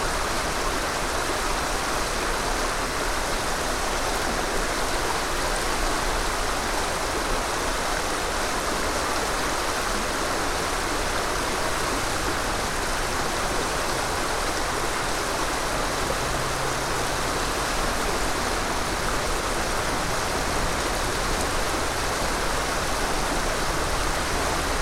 Powers Island Hiking Trail, Sandy Springs, GA, USA - Rushing River
Another recording along Powers Island Trail. This time the recorder is a little closer to the water. There's a bit of traffic in the background, but it's mostly covered by the sound of the water. There are geese calling in parts of the recording. The sounds were captured by clipping the mics to a tree.
[Tascam DR-100mkiii & Clippy EM-272 omni mics]
Fulton County, Georgia, United States, 10 January 2021, 16:32